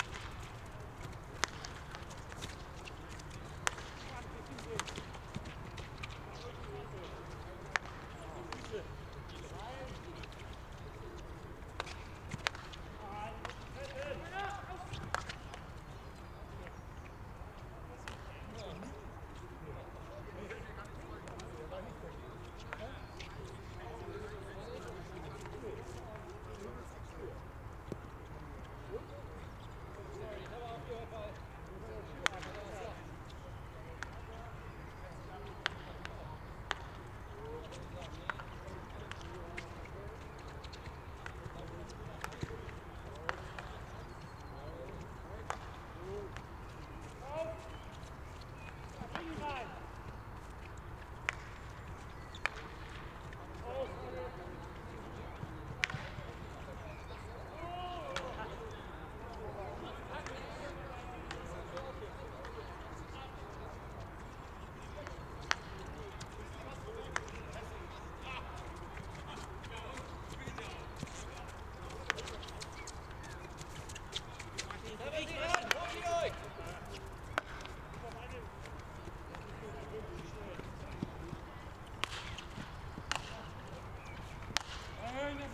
Ravensberg, Kiel, Deutschland - Field hockey training
Field hockey training (parents team) for fun in the evening
Zoom F4 recorder, Zoom XYH-6 X/Y capsule, windscreen